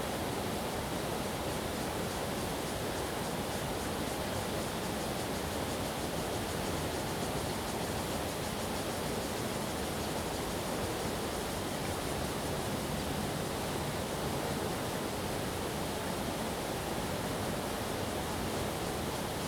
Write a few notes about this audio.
Stream of sound, Cicadas sound, Hot weather, Zoom H2n MS+XY